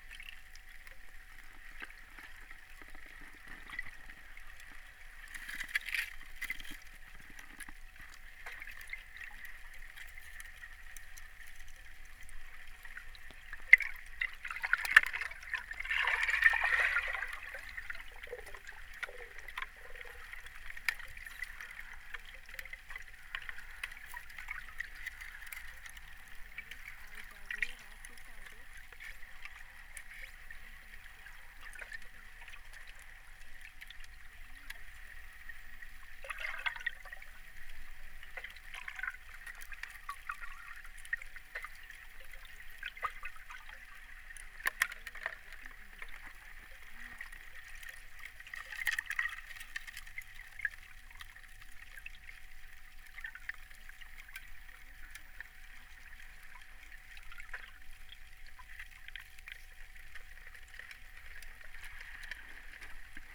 Underwater sounds of Vltava - freezy early evening
Sounds of Smichovs river bank in the very cold early evening. Recorded with two underwater microphones.